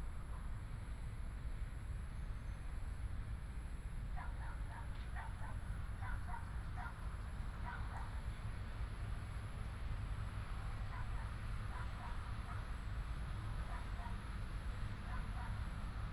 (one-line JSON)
{"title": "空軍七村, Hsinchu City - Walk in the park", "date": "2017-09-27 17:53:00", "description": "Walk in the park with a large green space, Dog sound, Binaural recordings, Sony PCM D100+ Soundman OKM II", "latitude": "24.82", "longitude": "120.96", "altitude": "14", "timezone": "Asia/Taipei"}